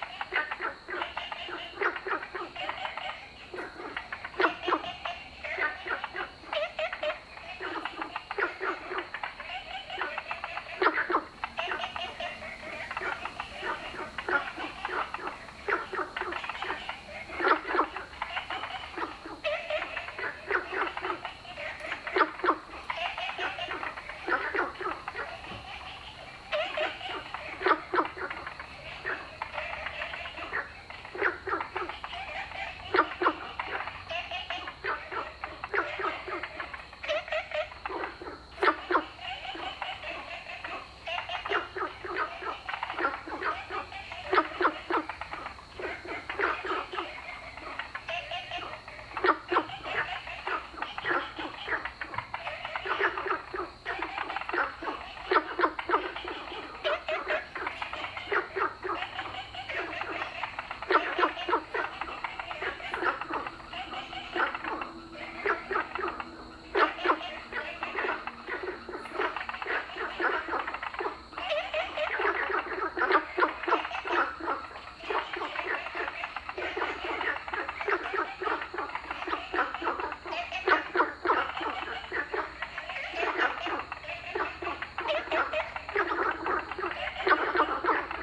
富陽自然生態公園, Taipei City, Taiwan - Frogs chirping
In the park, Frog sound, Ecological pool
Zoom H2n MS+XY